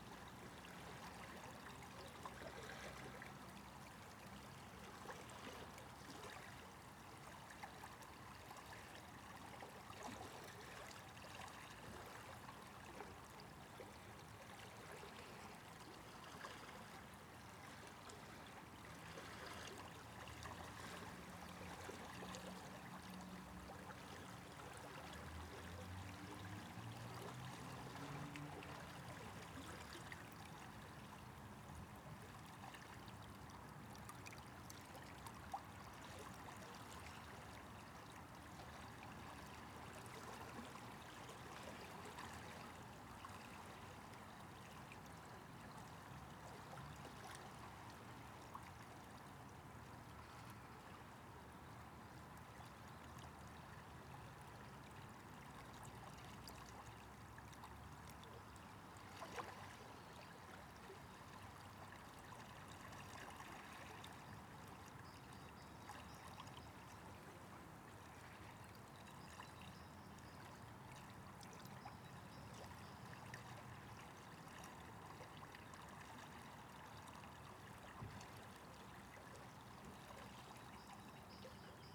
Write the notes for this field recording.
There was a dry spell of weather this morning, so I decided to make the most of it and go out recording. The location was a estuary, and the tide was on the way in (high tide around 3pm). After walking around and making a few recordings, I came across a inlet into what is called "Carnsew Pool", as the tide was coming in the water was rushing past me, swirling and bubbling (kind of), the current looked extremely strong. The location has changed since google did the satellite shots, above my location is a inlet that leads to the other body of water. The weather was cloudy, dry with a slight breeze. Slight post-processing - Used EQ to remove traffic hum. Microphones - 2 x DPA4060, Recorder - Tascam DR100